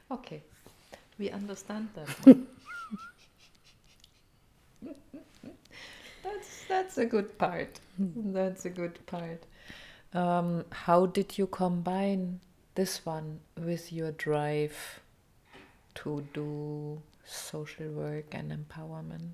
Maryann followed her love, and then-husband to Germany. She left behind a well-loved life-style and culture and a functioning life. There were a number of years that she even regretted leaving – as she tells us in reflection. But, Maryann grew strong on the challenges, and moved on to strengthen others: “the things that worried me, are the things I can make someone else strong from”.... and where she is now, she says, she has come home...
the entire interview is archived here: